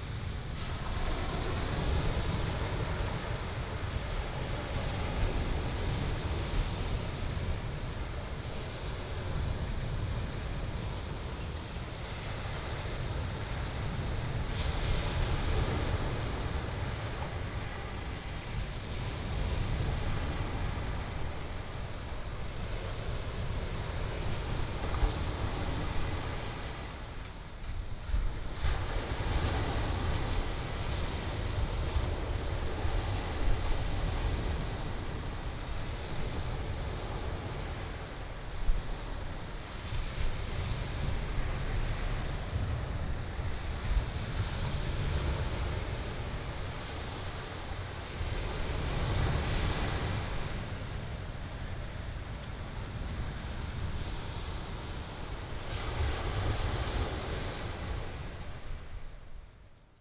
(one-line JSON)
{"title": "Baishawan Beach, New Taipei City - Sound of the waves", "date": "2016-04-17 07:03:00", "description": "at the seaside, Sound of the waves", "latitude": "25.28", "longitude": "121.52", "timezone": "Asia/Taipei"}